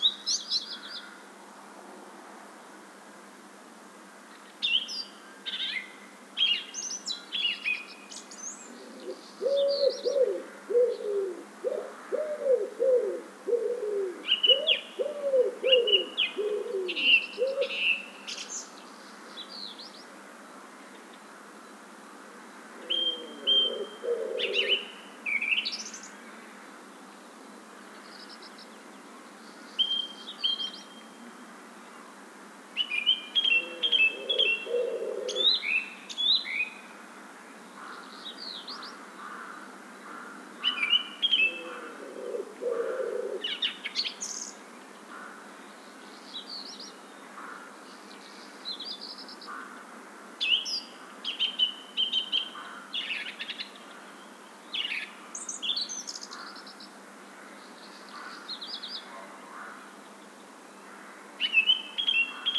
Birds (song thrush and city pigeon) chirping at the old (partly abandoned today) military hospital in Przemyśl.
Sound posted by Katarzyna Trzeciak.
województwo podkarpackie, Polska